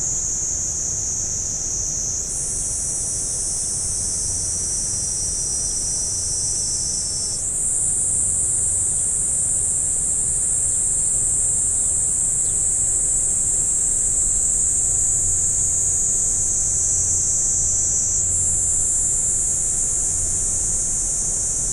Omifuji, Yasu City, Shiga Prefecture, Japan - Cicada
A lone cicada singing in a Japanese cherry tree along a path beside a small river. The high-frequency sound of the cicada can be heard over the rumble of a waterfall and some human sounds. (WLD 2017)